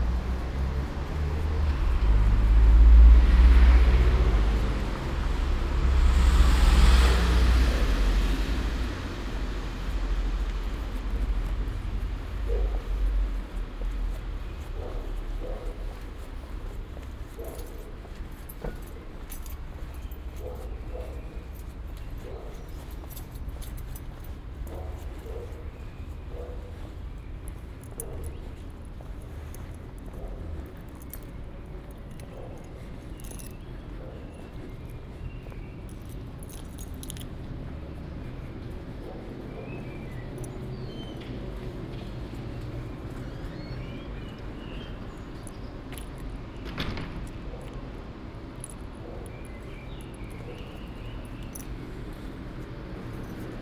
Ascolto il tuo cuore, città, I listen to your heart, city, Chapter CXXXII - "Two years after the first soundwalk in the time of COVID19": Soundwalk
"Two years after the first soundwalk in the time of COVID19": Soundwalk
Chapter CLXXXVIII of Ascolto il tuo cuore, città. I listen to your heart, city
Thursday, March 10th, 2022, exactly two years after Chapter I, first soundwalk, during the night of closure by the law of all the public places due to the epidemic of COVID19.
This path is part of a train round trip to Cuneo: I have recorded the walk from my home to Porta Nuova rail station and the start of the train; return is from inside Porta Nuova station back home.
Round trip are the two audio files are joined in a single file separated by a silence of 7 seconds.
first path: beginning at 6:58 a.m. end at 7:19 a.m., duration 20’33”
second path: beginning at 6:41 p.m. end al 6:54 p.m., duration 13’24”
Total duration of recording 34’04”
As binaural recording is suggested headphones listening.
Both paths are associated with synchronized GPS track recorded in the (kmz, kml, gpx) files downloadable here:
first path:
second path:
Piemonte, Italia, 10 March 2022